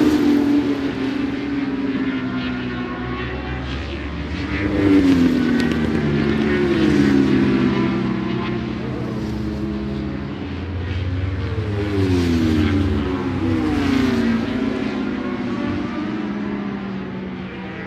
British Superbikes 2005 ... 600 free practice one (contd) ... one point stereo mic to minidisk ...
Longfield, UK, 26 March 2005, 11:00